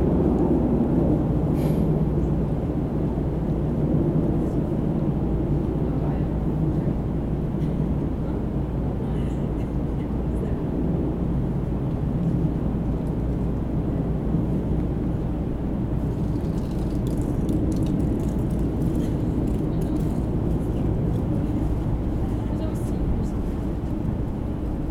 Muhlenberg College Hillel, West Chew Street, Allentown, PA, USA - Century Arch
You can hear sounds around the microphone, including the Muhlenberg toll bounce off the Century arch in a unique way. We also hear leaves scratching against the ground due to the wind.